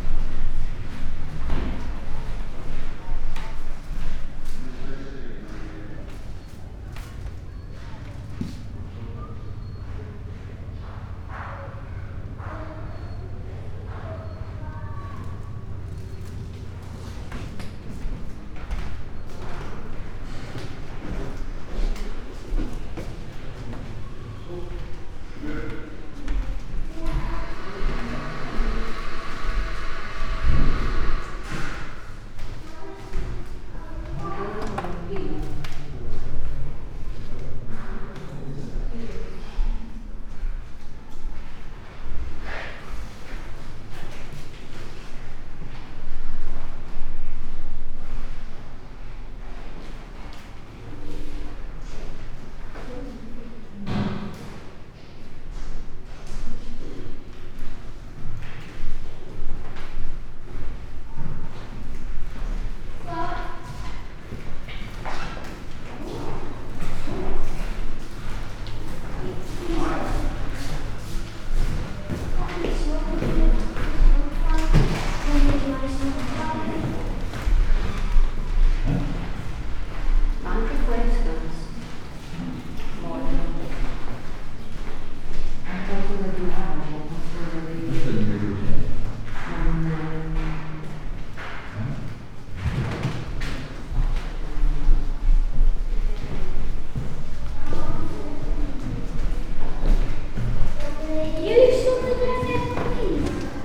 Euphrasian Basilica Complex, Poreč, Croatia - spacious rooms
with wooden floors ... quick intervention of opening up a small window, to release outside in